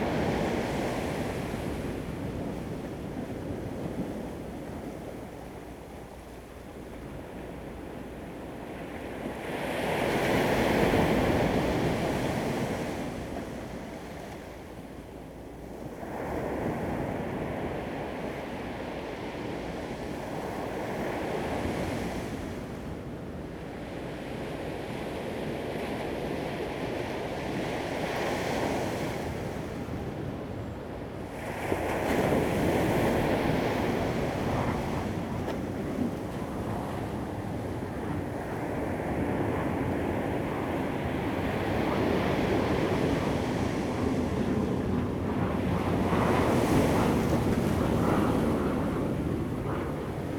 台東海濱公園, Taitung City - sound of the waves
Waterfront Park, sound of the waves, Beach at night, The sound of aircraft flying
Zoom H2n MS + XY